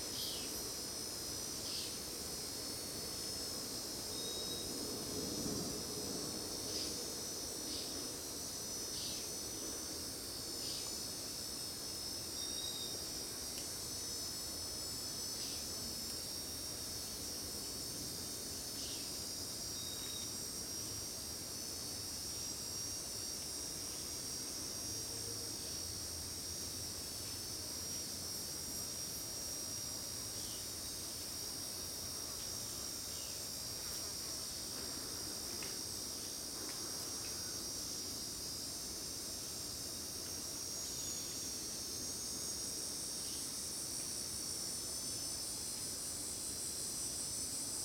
Cicadas and other birds at Parque da Cantareira